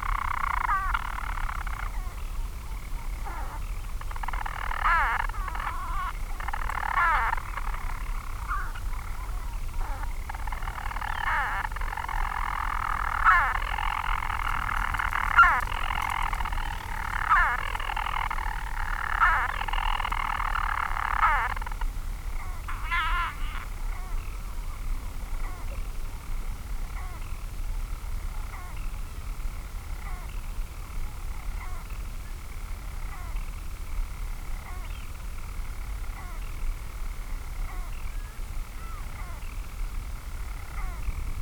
Skokholm Island Bird Observatory ... storm petrel singing ..? towards the end of this clip manx shearwaters can be heard leaving their burrows heading out to sea ...
16 May 2016, ~04:00